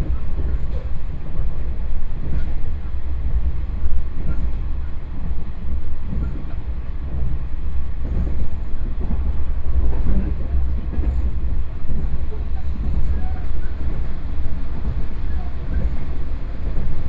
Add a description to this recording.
from Yingge Station to Shulin Station, Zoom H4n + Soundman OKM II